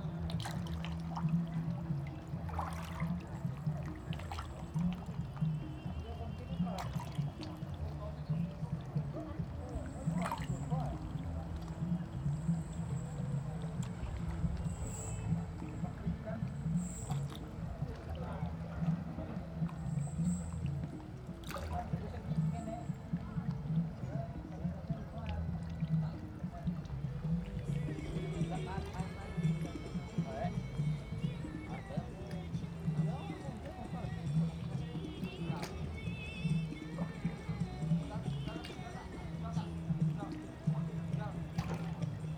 Xiyu Township, Penghu County - In the fishing port
In the fishing port, Windy
Zoom H6 + Rode NT4